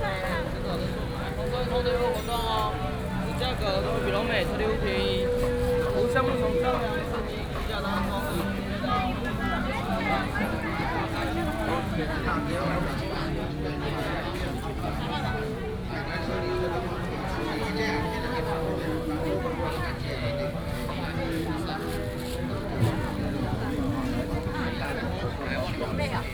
Yongle St., Changhua City - Walking in the market
Walking in the traditional market